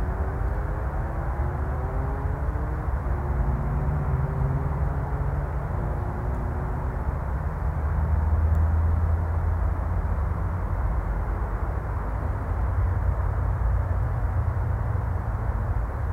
Würzburg, Deutschland - Bombenangriff Glockenläuten zum 16.3.1945
26 min binaural recording Glockenläuten der Stadt.